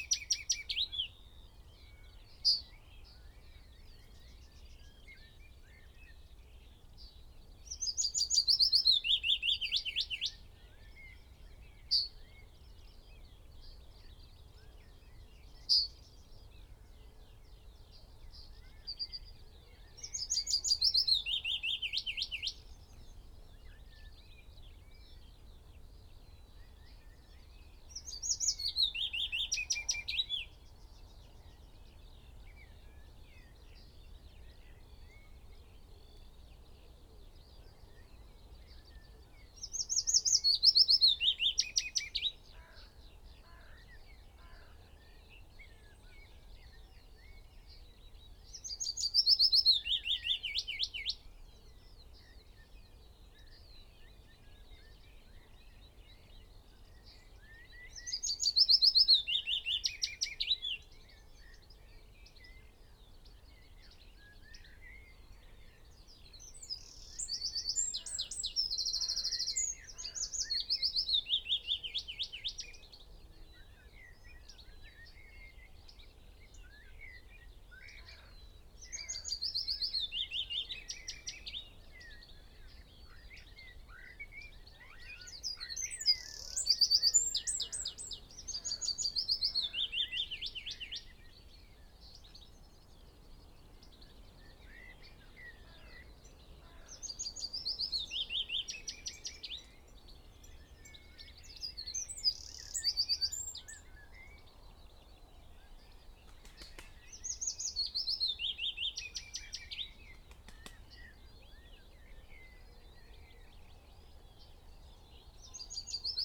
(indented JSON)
{
  "title": "Green Ln, Malton, UK - willow warbler song ...",
  "date": "2021-05-11 06:39:00",
  "description": "willow warbler song ... dpa 4060s clipped to a bag wedged in the crook of a tree to Zoom H5 ... bird calls ... song from ... pheasant ... yellowhammer ... wood pigeon ... chaffinch ... skylark ... magpie ... wren ... linnet ... blackbird ... blackcap ... lesser whitethroat ... unattended extended unedited recording ... background noise ...",
  "latitude": "54.12",
  "longitude": "-0.57",
  "altitude": "96",
  "timezone": "Europe/London"
}